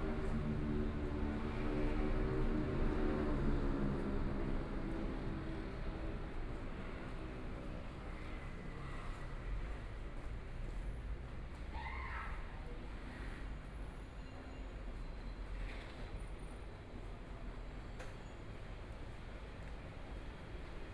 鹽埕區教仁里, Kaoshiung City - Walking in the small roadway
Walking in the small roadway, Traffic Sound